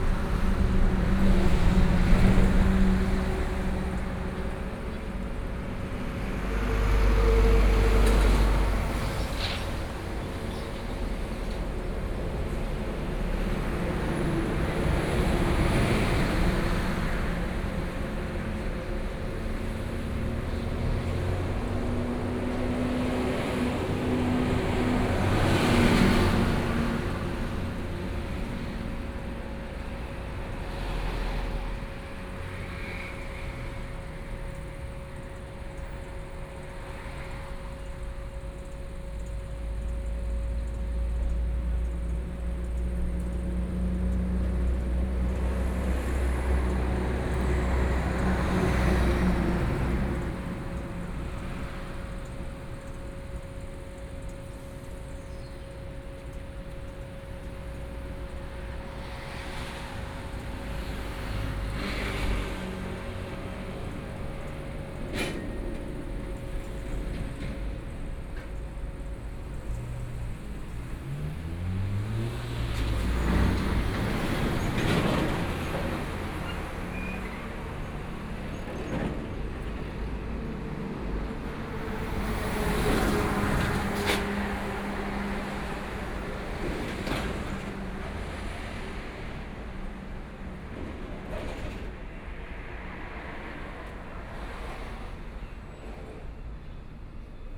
瑞芳區海濱里, New Taipei City - Traffic Sound
Standing on the roadside, Traffic Sound, Very hot weather
Sony PCM D50+ Soundman OKM II